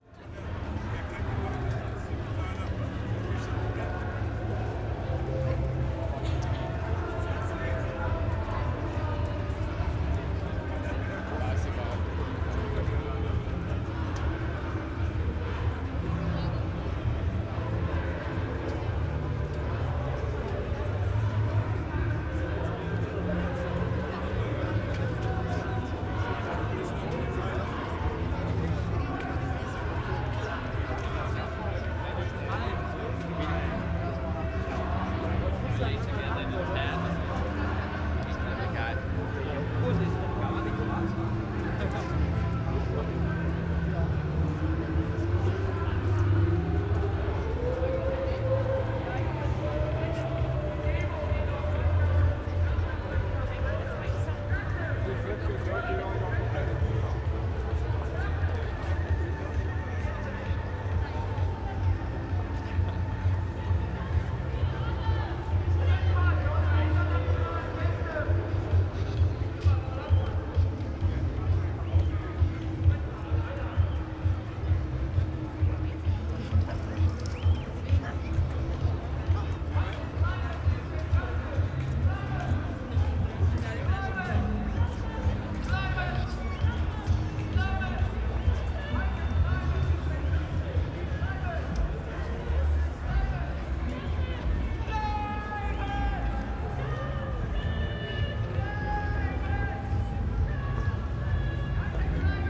Friedrichstraße, Berlin, Deutschland - sound of demonstration
Friedrichstr. Berlin, sound of 1000s of people in the street, during a demonstration about climate change
(Sony PCM D50, Primo EM172)
Berlin, Germany, 1 December 2018